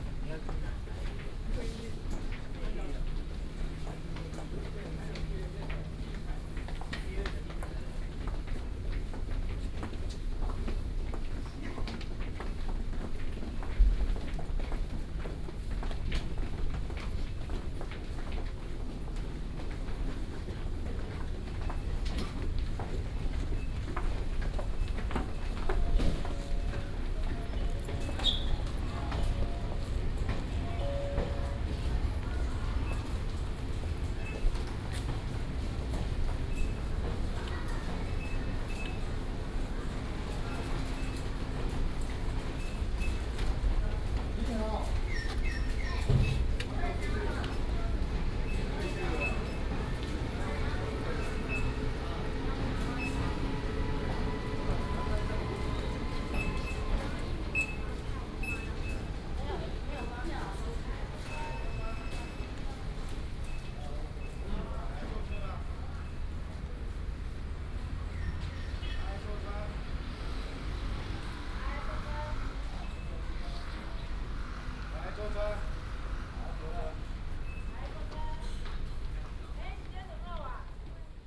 Hsinchu Station, Hsinchu City - Walking in the station
From the station platform, Through the underground road, Walk to the station exit